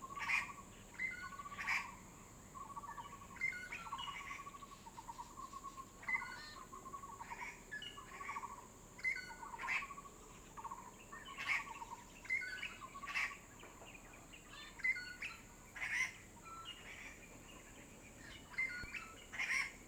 traffic sound, Many kinds of bird calls
Zoom H2n MS+XY
Taitung County, Taiwan, 23 March 2018